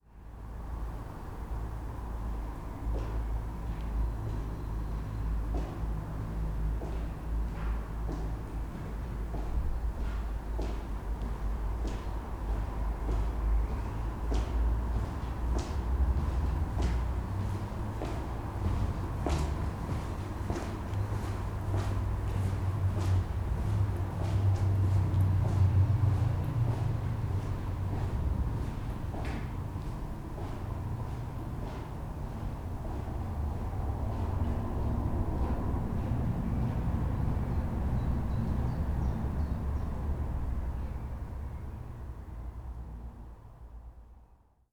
radevormwald, elberfelder straße: fußgängertunnel - the city, the country & me: pedestrian underpass
the city, the country & me: may 8, 2011